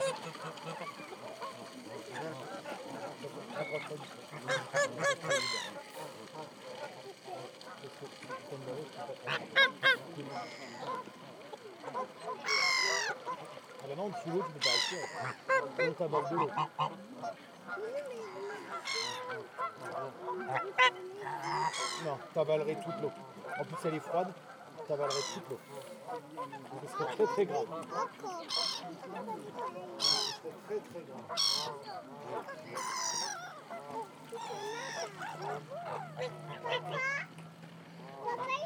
Rambouillet, France - An hour close to the birds on the Rondeau lake
During the winter period, waterfowl were hungry. Intrigued by their presence in large numbers on the waters of the Lake Le Rondeau, near the Rambouillet castle, I recorded their songs for an uninterrupted hour. Since they were hungry, they solicited all the walkers. We hear them a lot. The recording is quiet on this new year day and really provokes the sound of a lullaby.
We can hear : Mallard duck, Canada goose, Eurasian Coot, Domestic goose, Blackhead gull, Homo sapiens.